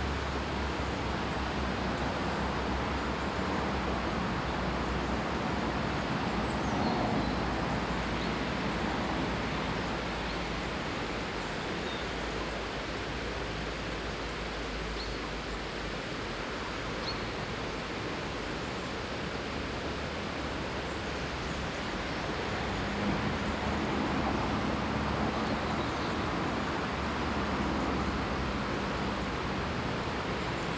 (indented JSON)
{
  "title": "Scarborough, UK - Autumn, Peasholm Park, Scarborough, UK",
  "date": "2012-10-13 05:40:00",
  "description": "Binaural field recording Autumn, Peasholm Park, Scarborough, UK\nWaterfall, ducks, other bird life",
  "latitude": "54.29",
  "longitude": "-0.41",
  "altitude": "20",
  "timezone": "Europe/London"
}